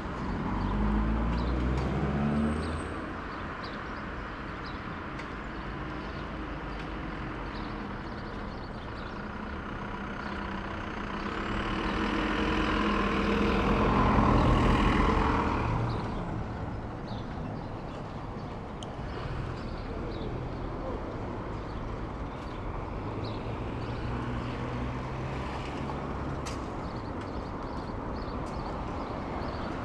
Berlin: Vermessungspunkt Maybachufer / Bürknerstraße - Klangvermessung Kreuzkölln ::: 28.04.2011 ::: 09:40
Berlin, Germany, 28 April 2011, 09:40